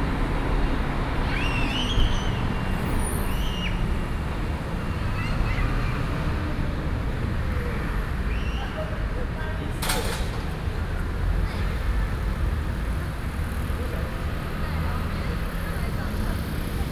Minquan St., Ruifang Dist., New Taipei City - Small park
In a small park plaza
Sony PCM D50+ Soundman OKM II